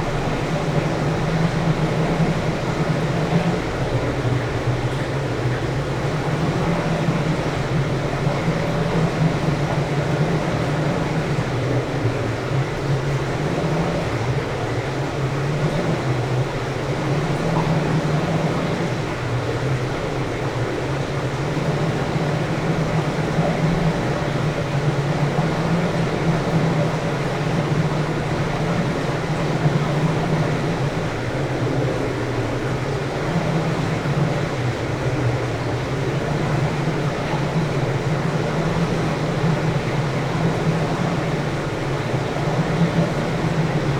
This tide/irrigation control gate has 5 large steel plate gates...they swing freely at the bottom end like free-reeds in a Sho or harmonica...one gate had an interesting modulating low tone happening, so I recorded the sound in the gap between the steel plate gate and the concrete housing structure of all 5 gates...
전라남도, 대한민국, 25 January 2020, 15:00